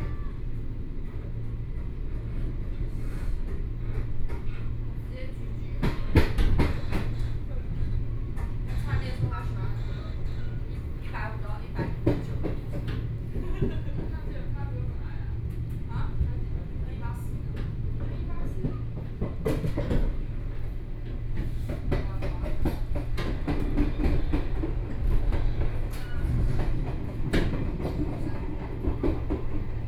Western Line, Taiwan - Tze-Chiang Train
Zhongli Station to Taoyuan Station, Zoom H4n+ Soundman OKM II